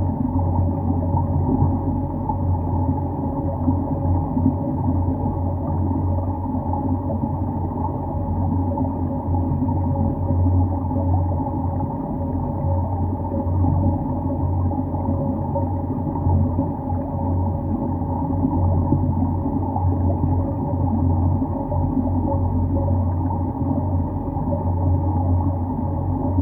Panevėžys, Lithuania, the dam
Listening the metalic construction of a small dam
Panevėžio apskritis, Lietuva, 21 November